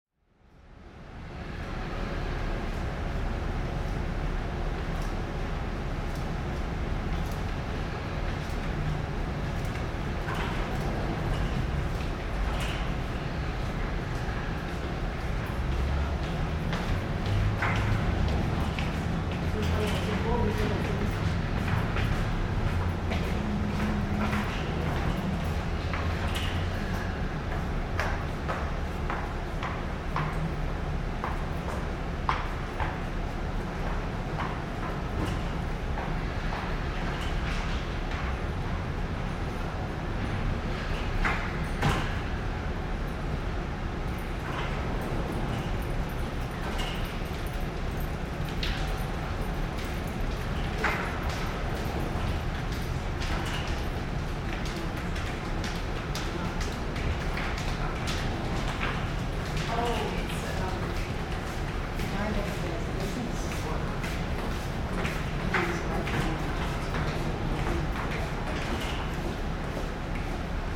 Calgary +15 1st St SW bridge
sound of the bridge on the +15 walkway Calgary
Alberta, Canada